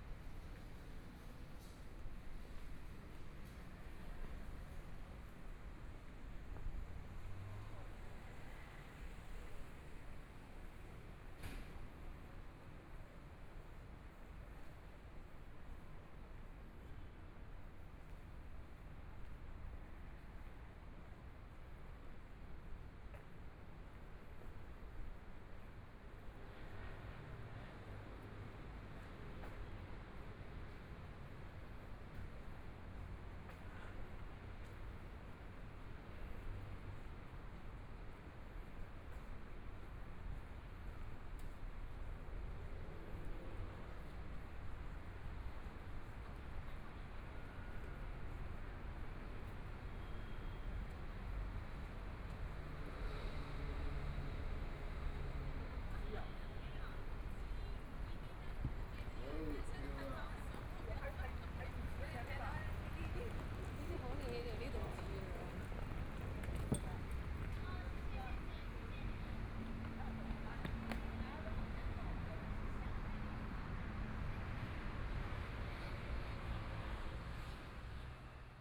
Walking through the Street, Environmental sounds, Motorcycle sound, Traffic Sound, Binaural recordings, Zoom H4n+ Soundman OKM II